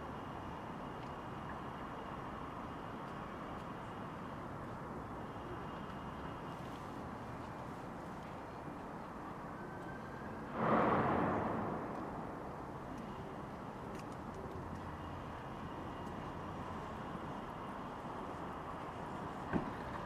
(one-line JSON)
{"title": "ул. Донская, Москва, Россия - Donskoy Monastery", "date": "2020-01-27 15:32:00", "description": "The territory of the Donskoy Monastery. I sat on a bench and listened to what was happening around me. Frosty winter day, January 27, 2020. Recorded on a voice recorder.", "latitude": "55.71", "longitude": "37.60", "altitude": "153", "timezone": "Europe/Moscow"}